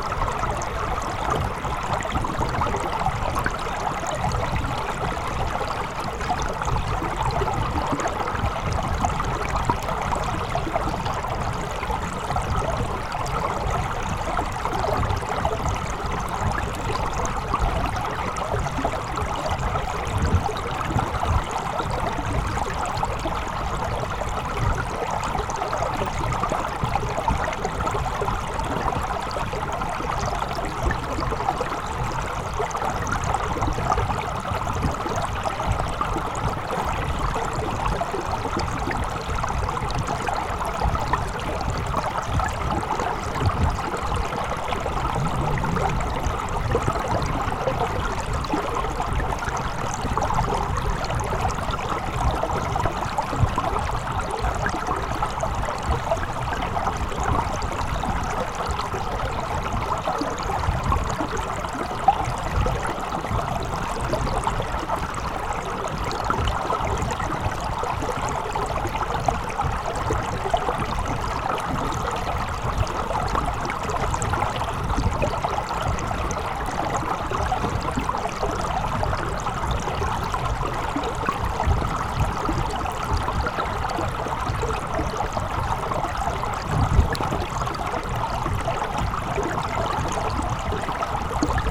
Chaumont-Gistoux, Belgique - The Train river
The Train river, recorded underwater, in a very bucolic landscape.